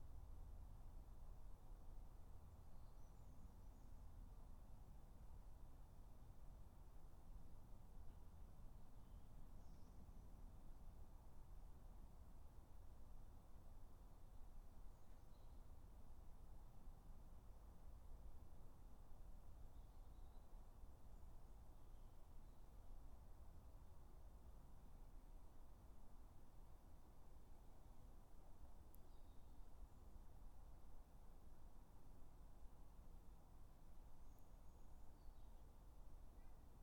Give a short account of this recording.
3 minute recording of my back garden recorded on a Yamaha Pocketrak